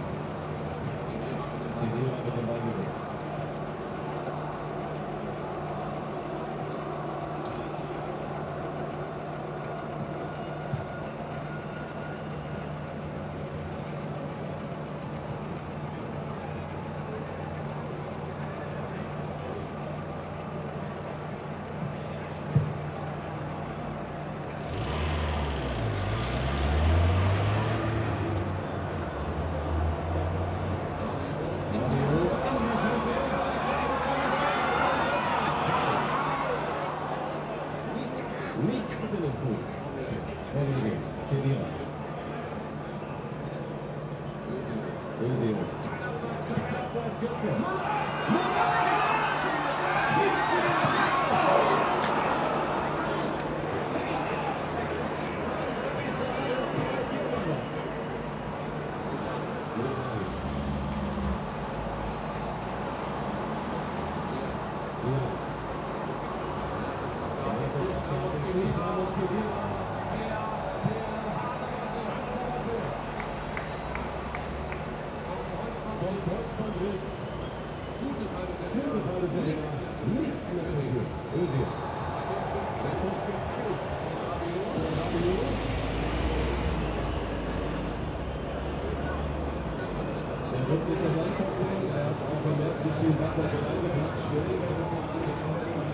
Nordstadt, Bonn, Deutschland - Bonn - Public viewing in two adjacent pubs
Bonn - Public viewing in two adjacent pubs. Ghana vs. Germany.
[Hi-MD-recorder Sony MZ-NH900, Beyerdynamic MCE 82]